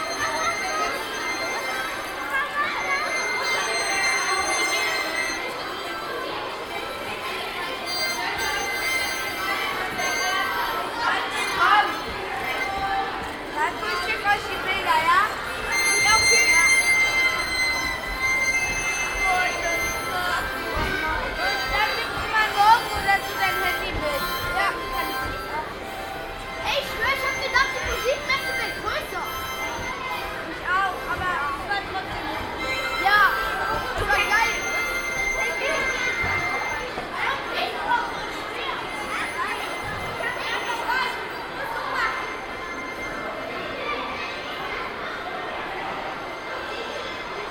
a group of 500 kids leaving the ehibition music 4 kids at frankfurt music fair playing give away blues harps
soundmap d: social ambiences/ listen to the people - in & outdoor nearfield recordings